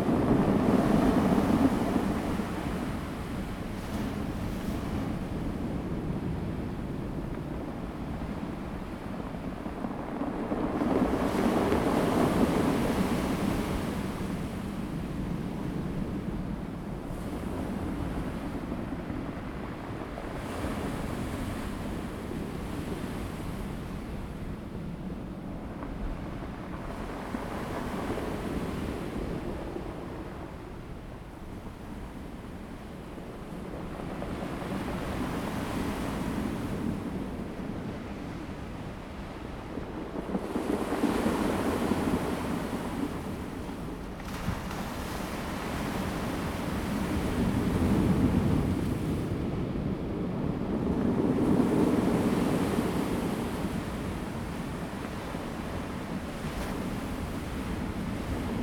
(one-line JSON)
{"title": "達仁溪橋, 南田 Daren Township - Close to the wave", "date": "2018-03-23 11:10:00", "description": "Close to the wave, Rolling stones\nZoom H2n MS+XY", "latitude": "22.26", "longitude": "120.89", "altitude": "5", "timezone": "Asia/Taipei"}